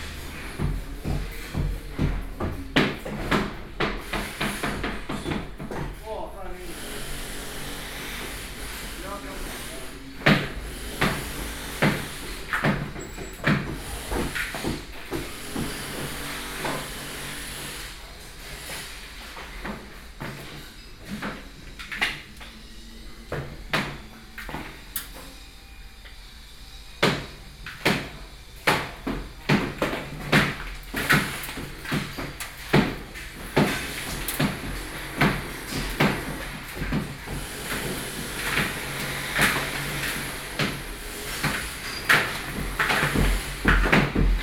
Beitou, Taipei - Being renovated house
Being renovated house, Binaural recordings+Zoom H4n +Contact Mic.
Taipei City, Taiwan